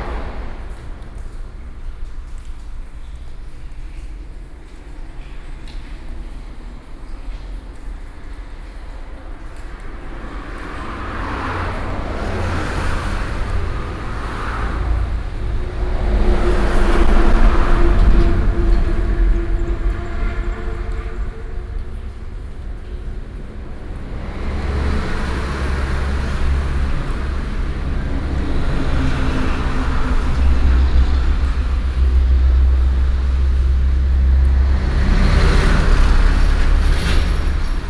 Ruifang, New Taipei City - ancient tunnel
Ruifang District, New Taipei City, Taiwan